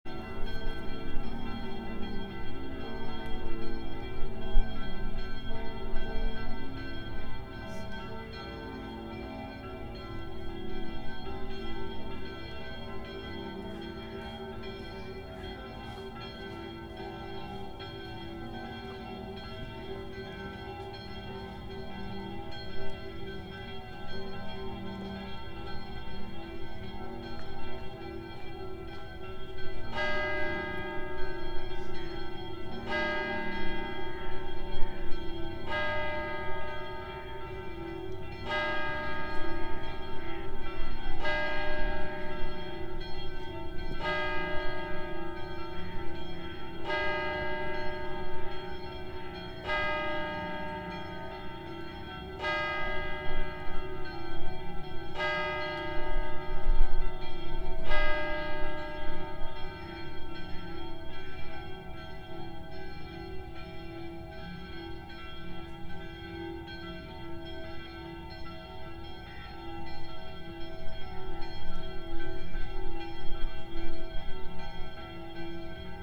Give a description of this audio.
Sunday bells at a sportsground next to the Museggmauer in Luzern